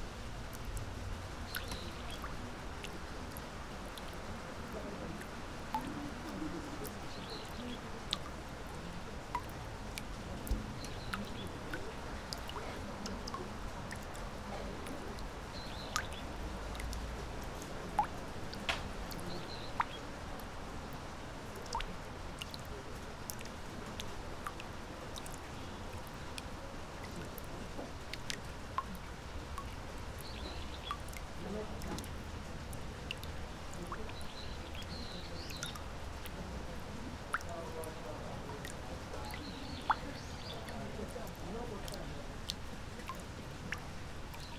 Osaka, Shitennoji Temple, Gokuraku-jodo Garden - droplets from a bamboo pipe
droplets hitting surface of water on a big plate, voices of children and teachers from a nearby building.
近畿 (Kinki Region), 日本 (Japan), March 2013